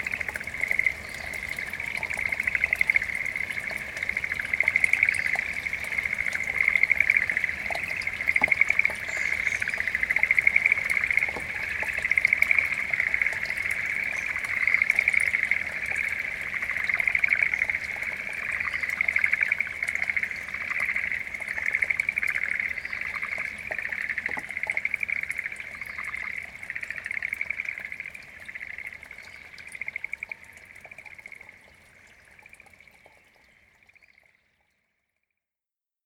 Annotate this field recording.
I was recording another river just outside the mountain village of Seki when I suddenly heard the most curious sound coming from a satoyama just behind me. This mountain in particular was absolutely marvellous to listen to and walk through and had an ancient and mystical splendour about it, so I had already developed a kind of reverence for it. As a result, when I first heard this sound that I couldn’t identify I assumed it was some sort of mountain spirit. Turns out it was dozens – if not hundreds – of tiny invisible frogs that would sing out in unison, but would fade out at any sign of movement amidst the mountains and fade back in once the apparent threat had passed (in this recording you can hear this affect caused by a passing train in the distance as well as when I stood up to stretch my legs).